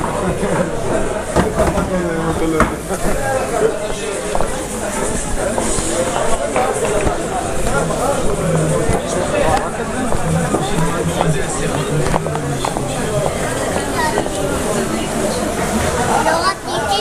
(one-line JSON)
{"title": "carmel-market, tel-aviv/yafo - carmel-market", "date": "2010-03-04 15:11:00", "description": "a walk starting at Shafar 10, where a Cafè named שפר is, heading to the market, going right hand till the end at Magen David Square. Takes about 9 minutes.", "latitude": "32.07", "longitude": "34.77", "timezone": "Asia/Tel_Aviv"}